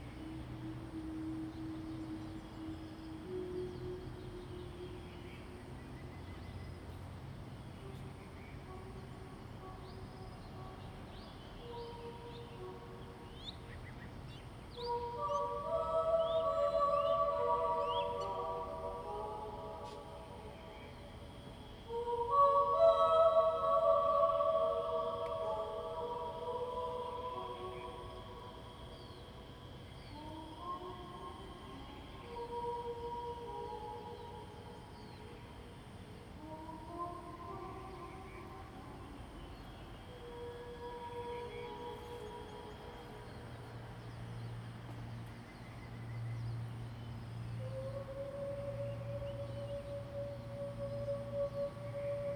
Vocal exercises test
Zoom H2n MS+XY
Nantou County, Taiwan, 18 May 2016, ~07:00